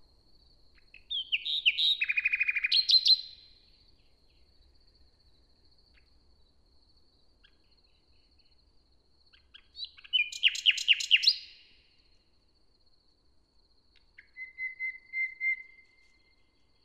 hoscheid, forest, nightingale
Inside the forest. recorded early on a summer morning. After a wet night the sun comes up and sparkles through the leaves of the trees. A nightingale performs a kind of morning song.
Hoscheid, Wald, Nachtigall
Im Wald, aufgenommen an einem frühen Sommermorgen. Nach einer nassen Nacht geht die Sonne auf und schimmert durch die Baumblätter. Eine Nachtigall singt ein Morgenlied.
Hoscheid, forêt, rossignol
Enregistré dans la forêt, un matin d’été. Le soleil se lève après une nuit humide et scintille à travers les feuilles des arbres. Un rossignol nous joue une sorte de chanson du matin.
Consthum, Luxembourg